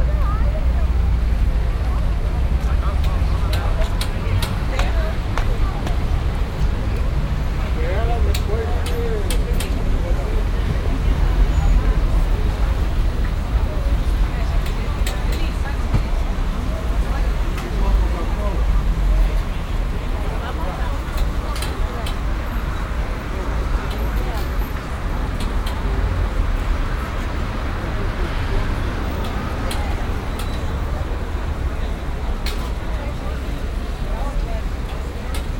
Sao Paulo, Praca da Liberdade